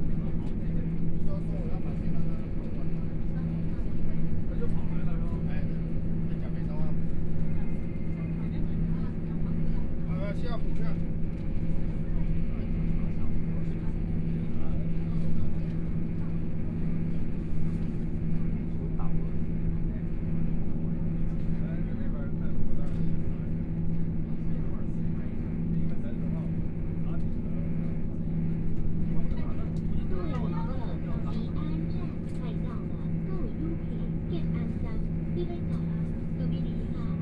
Ji'an Township, Hualien County - Noise inside the train
Noise inside the train, Train voice message broadcasting, Dialogue between tourists, Mobile voice, Binaural recordings, Zoom H4n+ Soundman OKM II